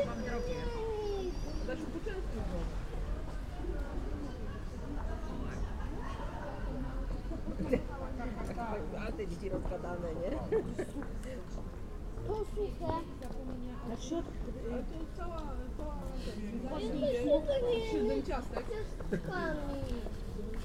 Reszel, Poland, at the castle
standing with my mkes at the castle...curious passangers...
12 August 2014